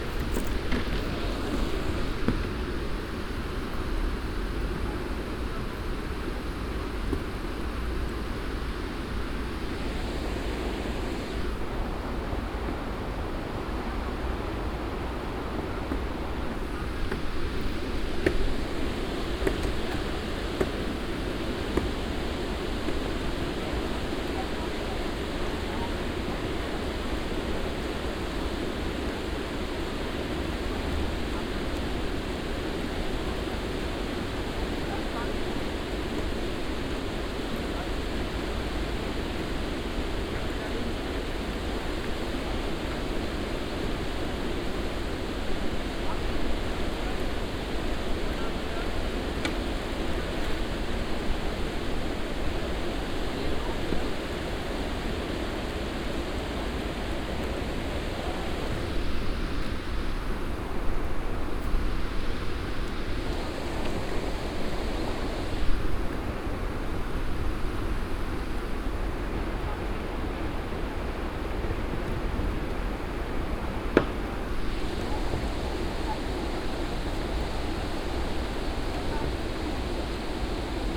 canou drivers on the lippe, here a specially prepared piece of the river
soundmap nrw - social ambiences and topographic field recordings